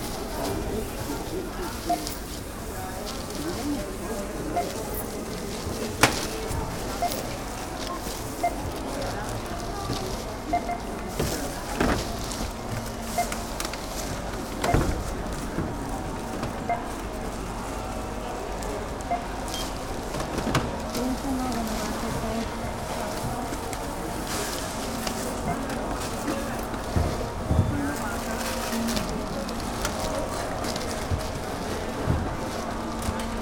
Nova Gorica, Slovenija, Kulandija - Hvala, Nasvidenje, Dober Dan